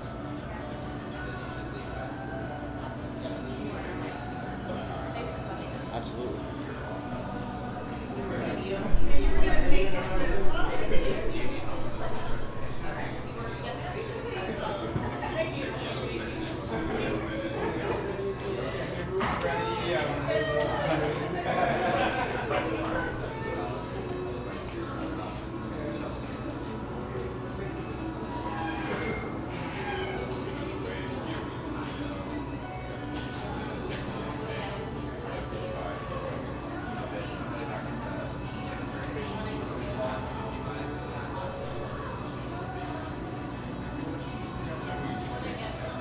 Midtown, New York, NY, USA - Arlo NoMad Lobby
Arlo NoMad hotel lobby
Friday afternoon
using a TASCAM DR40